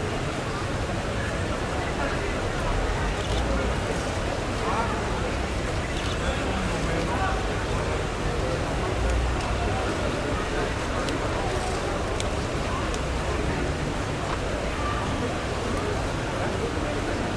Monastiraki Plaza Athens, Greece - street sounds in the plaza